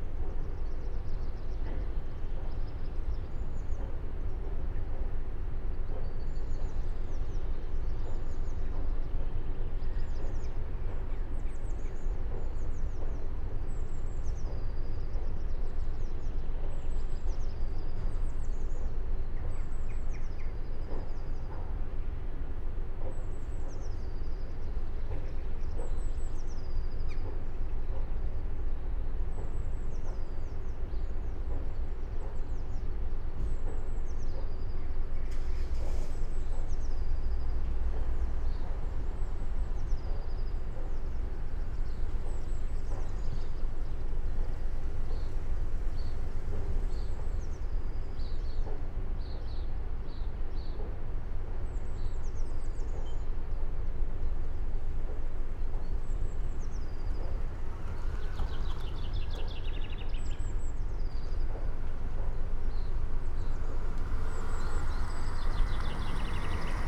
Niederaußem, Auenheim - alongside power plant
slow walk alongside the Niederaußem power station, ambience, plant hum, almost no people or cars on this tuesday morning.
(tech: SD702, DPA4060)
Bergheim, Germany, 3 April 2012, 09:30